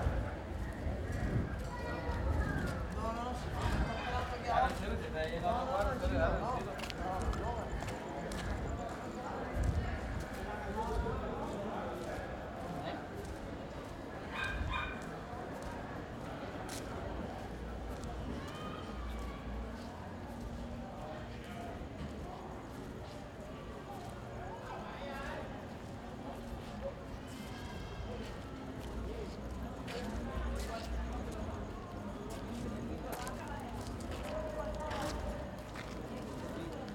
Early evening walk through Old Havana in the direction of El Capitolio.